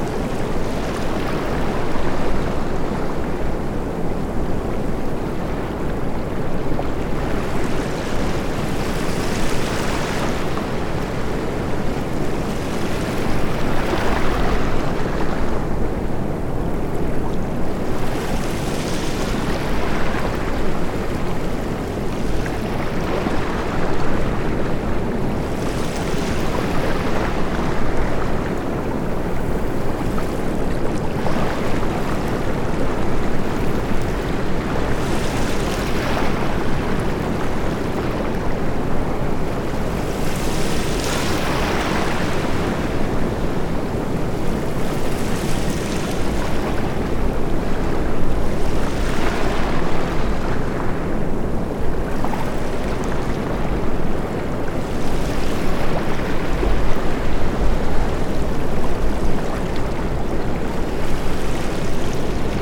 Cox's bazar is known for it's very long sea beach. This recording was made on a summer afternoon on the beach. There was no one around, it was totally empty. So you get to hear the Bay of Bengal without any interruption.